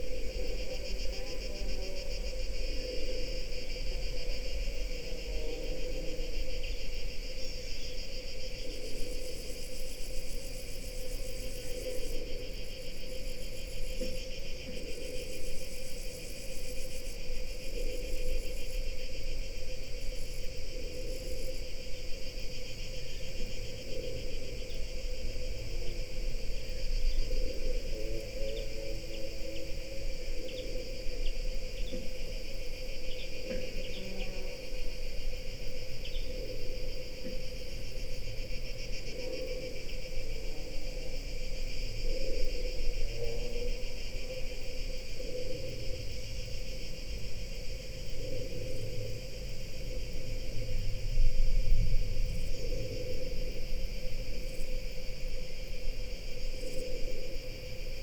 {"title": "quarry, Marušići, Croatia - void voices - stony chambers of exploitation - stone block", "date": "2013-07-19 15:23:00", "description": "sounds of stone, breath, wind, cicadas, distant thunder, broken reflector ...", "latitude": "45.41", "longitude": "13.74", "altitude": "269", "timezone": "Europe/Zagreb"}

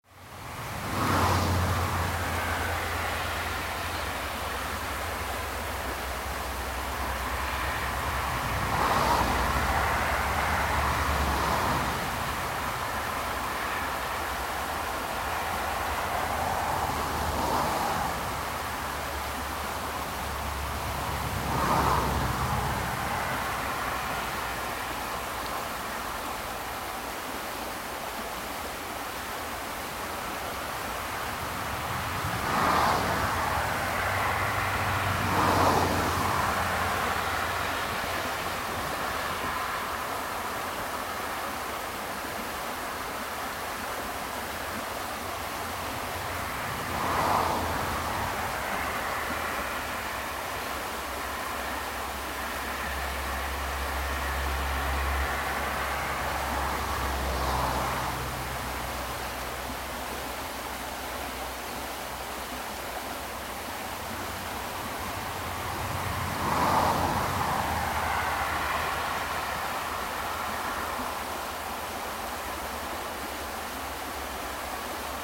{"title": "near ruppichteroth, bridge over river bröl", "description": "near: river Bröl. Background: cars passing on valley road.\nrecorded june 25th, 2008, around 10 p. m.\nproject: \"hasenbrot - a private sound diary\"", "latitude": "50.85", "longitude": "7.51", "altitude": "184", "timezone": "GMT+1"}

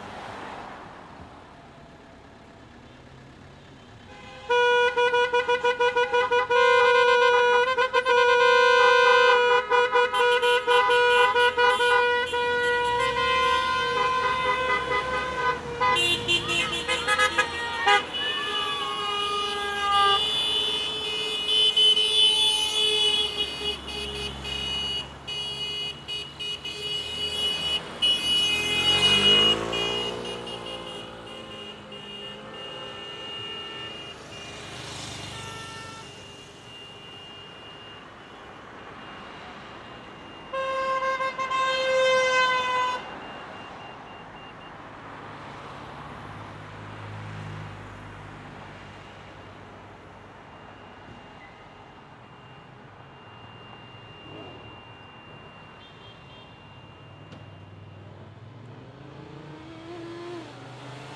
{"title": "Michelet Taine, Marseille, France - Marseille - Boulevard Michelet - Euro 2016", "date": "2016-07-07 22:30:00", "description": "Marseille - Boulevard Michelet\nDemi finale Euro 2016 - France/Allemagne\nfin de match", "latitude": "43.26", "longitude": "5.40", "altitude": "22", "timezone": "Europe/Paris"}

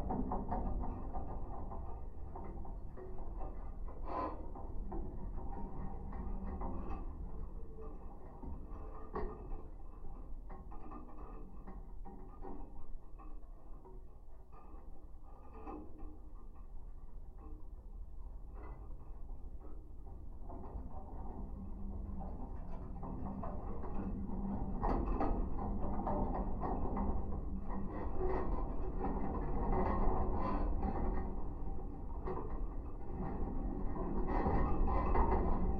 {"title": "Nolenai, Lithuania, barbed wire", "date": "2020-03-21 16:35:00", "description": "a piece of rusty barbed wire, probably from the soviet times. contact microphones", "latitude": "55.56", "longitude": "25.60", "altitude": "139", "timezone": "Europe/Vilnius"}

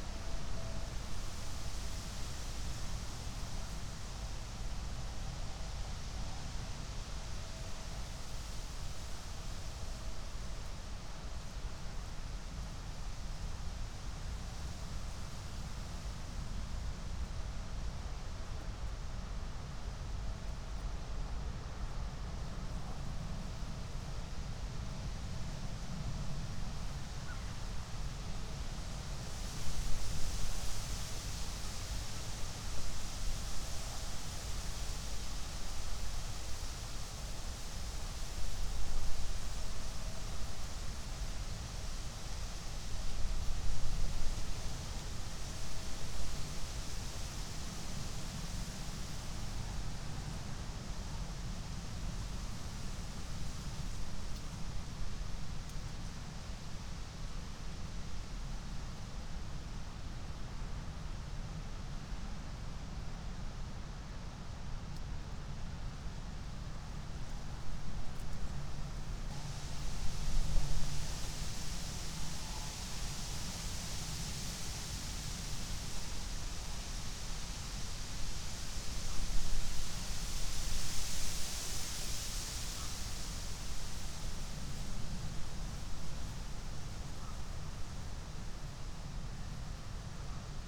{"date": "2021-11-06 13:48:00", "description": "13:48 Berlin, Buch, Moorlinse - pond, wetland ambience", "latitude": "52.63", "longitude": "13.49", "altitude": "51", "timezone": "Europe/Berlin"}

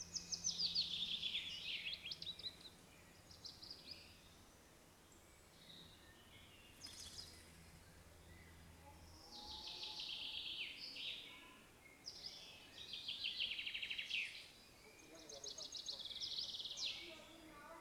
{"title": "Lithuania, Narkunai, at the edge of gardens", "date": "2011-05-22 18:30:00", "latitude": "55.46", "longitude": "25.52", "altitude": "148", "timezone": "Europe/Vilnius"}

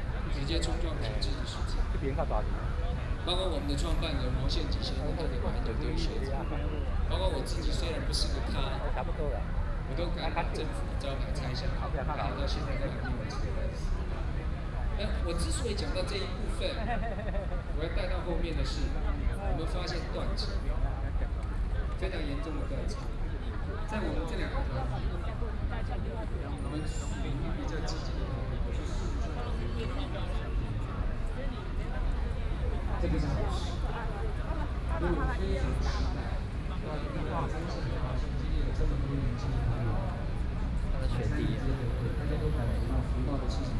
{"title": "Taipei City, Taiwan - Protest", "date": "2014-03-19 17:43:00", "description": "Protest, People and students occupied the Legislature\nBinaural recordings", "latitude": "25.04", "longitude": "121.52", "altitude": "11", "timezone": "Asia/Taipei"}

